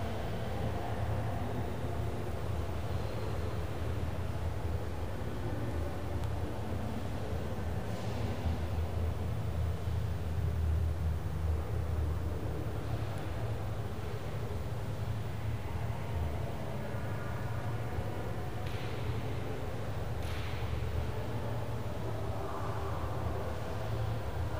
Hepworth Wakefield, West Yorkshire, UK - Hepworth reverberations 2
Another blurred conversation and distant ambient reverb in the Hepworth Wakefield.
(rec. zoom H4n)
6 February, ~16:00